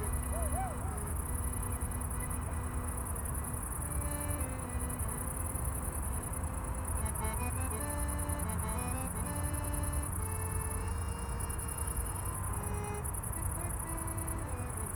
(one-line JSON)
{"title": "Tempelhofer Feld, Berlin - crickets and music", "date": "2012-07-08 20:45:00", "description": "far away from the other activities on the field, a woman is practising on the accordion. further crickets and noise of the nearby autobahn.\n(SD702 DPA4060)", "latitude": "52.47", "longitude": "13.41", "altitude": "49", "timezone": "Europe/Berlin"}